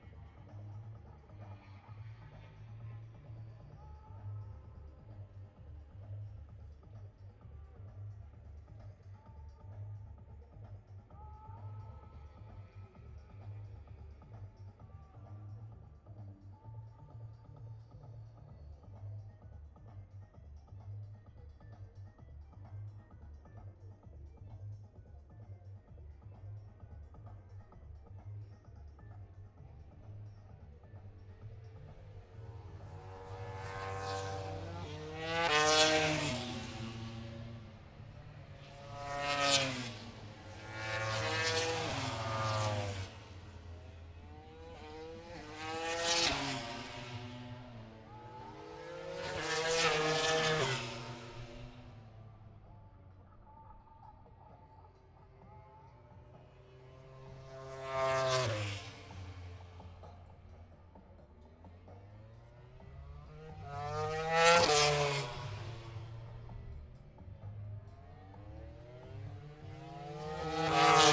Towcester, UK - british motorcycle grand prix 2022 ... moto grandprix ...
british motorcycle grand prix 2022 ... moto grandprix free practice three ... bridge on wellington straight ... dpa 4060s clipped to bag to zoom h5 ... plus disco ...
England, United Kingdom